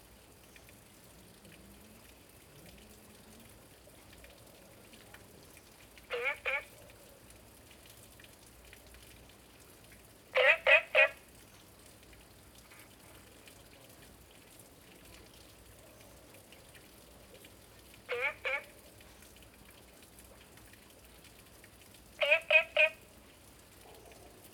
Green House Hostel, Puli Township - Frog calls
Frog calls
Zoom H2n MS+XY